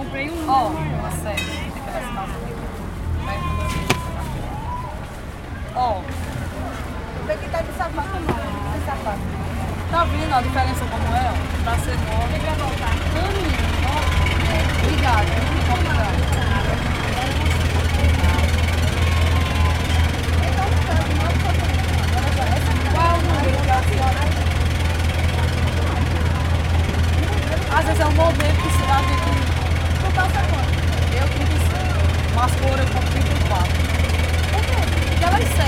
Pernambuco, República Federativa do Brasil - Feira de Exu
H4n 120/120